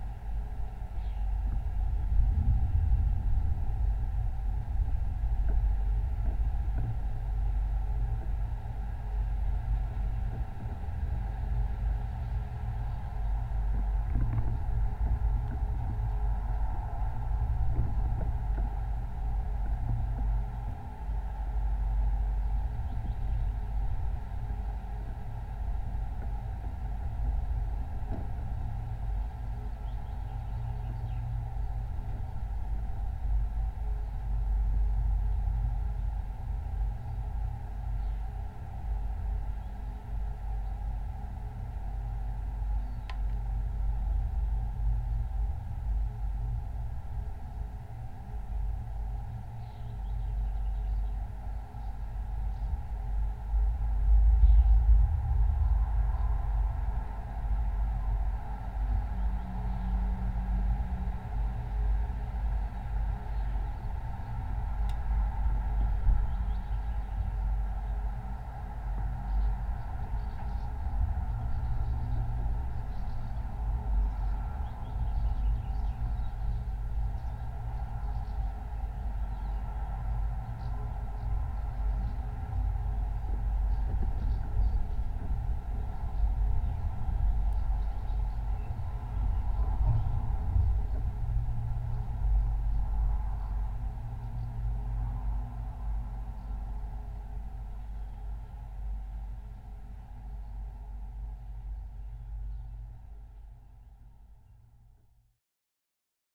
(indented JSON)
{
  "title": "Tauragnai, Lithuania, rain pipe",
  "date": "2020-06-13 16:20:00",
  "description": "Rain pipe on abandone building. contact microphones and geophone",
  "latitude": "55.44",
  "longitude": "25.81",
  "altitude": "188",
  "timezone": "Europe/Vilnius"
}